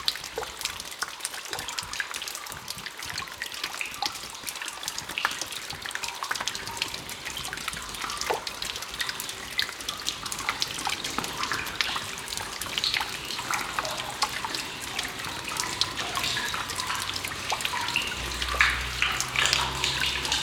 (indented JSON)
{
  "title": "Ulflingen, Luxemburg - Huldange, former railway tunnel",
  "date": "2012-08-07 15:30:00",
  "description": "Innerhalb eines alten, ehemaligen Eisenbahntunnels. Der Klang von Wasser, das die Steinwände des dunklen und kalten Tunnels herunterläuft und tropft.\nDer Tunnel befindet sich direkt an der Belgischen Grenze und wurde über die Zeit ein Refugium seltener Arten von Fledermäusen und steht daher unter dem Schutz des Luxemburgischen Naturschutz Verbandes. Durchdiese Massnahme wird eine europäische Fahrradwegroute hier unterbrochen.\nInside an old, former railway tunnel. The sound of water dripping down the stone walls inside the dark and cold tunnel. The tunnel is located directly at the Belgium border and has become a refuge for rare species of bats and is therefore protected by the nature conversation department of the gouverment of Luxembourg, which interrupts a european bicycle trail here.",
  "latitude": "50.16",
  "longitude": "6.04",
  "altitude": "541",
  "timezone": "Europe/Luxembourg"
}